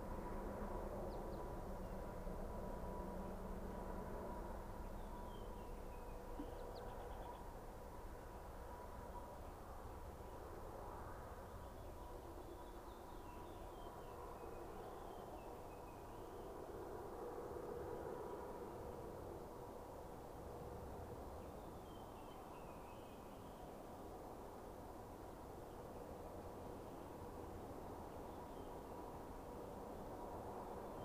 Glorieta, NM, so called USA - Glorieta morn